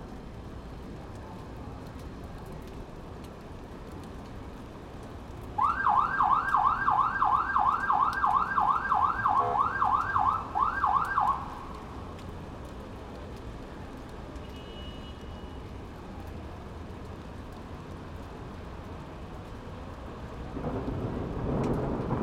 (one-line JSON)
{"title": "Colonia Centro, Mexico City, Federal District, Mexique - Rain in Mexico City during the night on 18th of july WLD 2015", "date": "2015-07-18 21:00:00", "description": "Rain in Mexico City during the night on 18th of july (World Listening Day 2015) recorded from the balcony above the Alameda Park (downtown Mexico City). Thunder and rain, voices and cars in background. Some police siren (during a long time at the end).\nWLD 2015\nRecorded by a MS Setup inside a Cinela Zephyx Windscreen and Rain Protection R-Kelly\nSound Devices 788T Recorder + CL8", "latitude": "19.44", "longitude": "-99.15", "altitude": "2243", "timezone": "America/Mexico_City"}